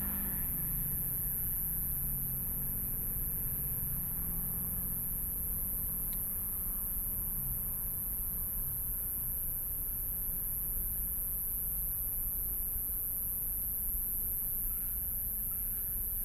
北投區豐年里, Taipei City - Environmental sounds
Traffic Sound, Environmental Noise
Binaural recordings